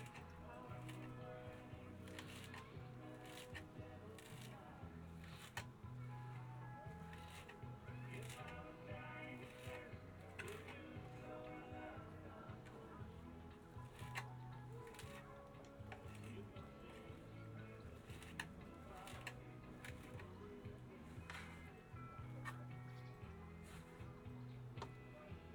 workum, het zool: marina, berth h - the city, the country & me: cooking aboard
cooking aboard, music from the nearby campsite, strange call of a coot (plop)
the city, the country & me: august 4, 2012